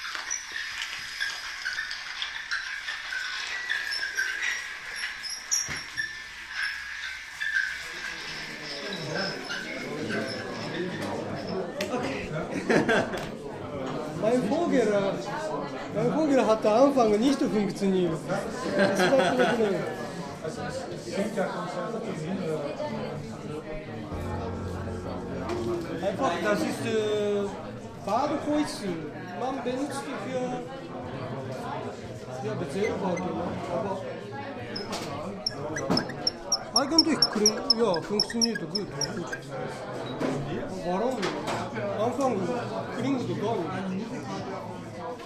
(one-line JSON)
{"title": "between natura morta and laterna magica - between natura morta and lanterna magica", "description": "April 12th, 9pm TOTAL artspace, Lenaustr.5\nSeiji Morimoto & Francesco Cavaliere\nexpect a different setting\nin fact the space will be dressed up a bit in order to achieve\nsomething ... between natura morta and lanterna magica.", "latitude": "52.49", "longitude": "13.43", "altitude": "49", "timezone": "GMT+1"}